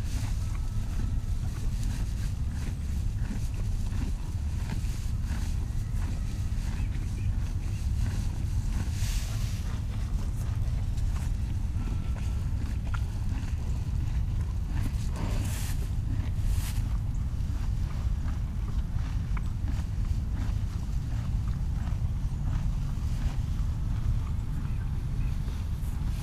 Spring Island, SC, USA
horse eating hay